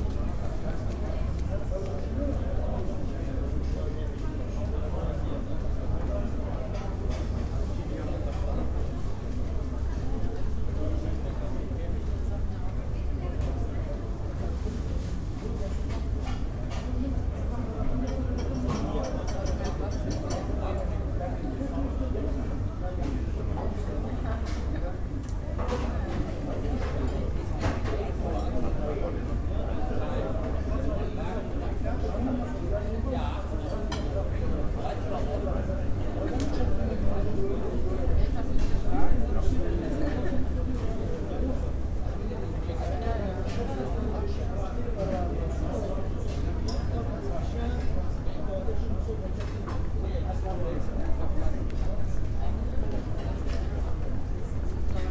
Ruhr-Universität Bochum, Bochum, Deutschland - uni-center bochum
uni-center bochum
6 September 2014, 13:43